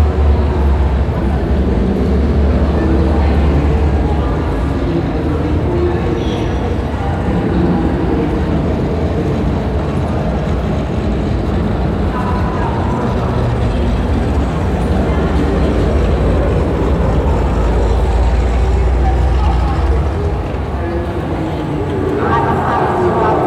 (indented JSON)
{
  "title": "Südstadt, Kassel, Deutschland - Kassel, documenta hall, motor installation",
  "date": "2012-09-13 15:50:00",
  "description": "Inside the documenta hall during the documenta 13. The sound of a motor exhibition by Thomas Bayrle. In the background the sound of the exhibition visitors.\nsoundmap d - social ambiences, art places and topographic field recordings",
  "latitude": "51.31",
  "longitude": "9.50",
  "altitude": "149",
  "timezone": "Europe/Berlin"
}